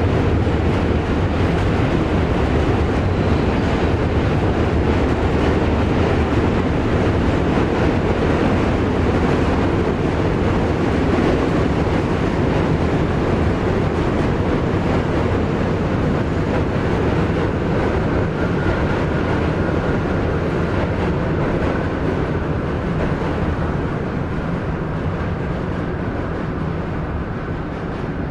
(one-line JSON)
{"title": "Manhattan Bridge, Brooklyn, NY, USA - Under the Williamsburg Bridge", "date": "2018-02-14 13:23:00", "description": "Under the Williamsburg Bridge. NYC\nzoom h6", "latitude": "40.70", "longitude": "-73.99", "altitude": "1", "timezone": "America/New_York"}